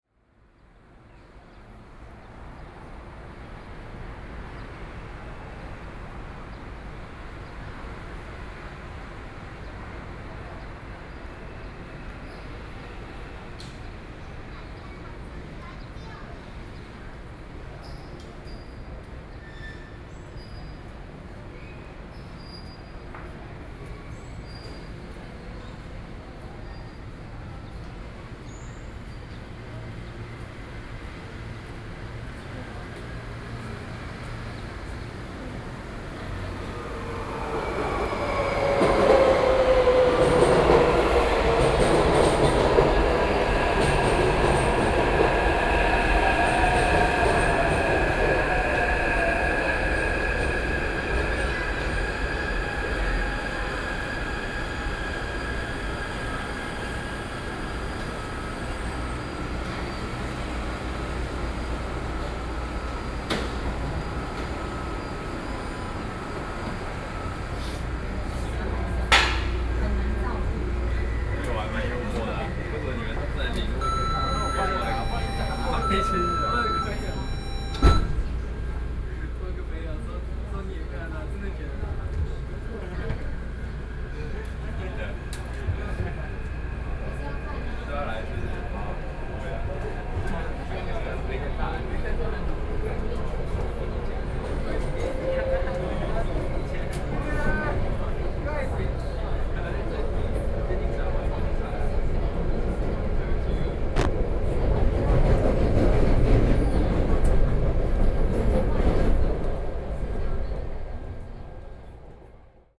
Qiyan Station, Taipei City - Train stops
Train stops, Sony PCM D50 + Soundman OKM II
9 July, 17:47